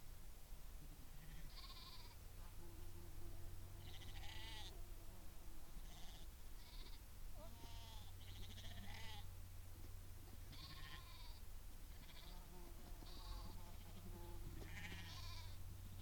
North Hamarsland, Tingwall, Shetland Islands, UK - Organic shetland sheep passing with their shepherd
This is the sound of Pete Glanville's Shetland sheep passing in a flock, on their way down to the large pen nearer to the house, where their health is checked, and where they get their supplementary feed. This flock is certified organic, and is one of the flocks whose fleeces are processed into Shetland Organics yarn. They are small sheep, with fleeces in many different colours, and of outstanding quality. Pete Glanville - their shepherd - was amazingly helpful to me during my visit to Shetland, and it was his suggestion that he bring the sheep in towards their pen, and that I place my microphones somewhere where I could document this moment. This recording was made by placing my EDIROL R-09 about halfway along the path the sheep take to their pen.
3 August 2013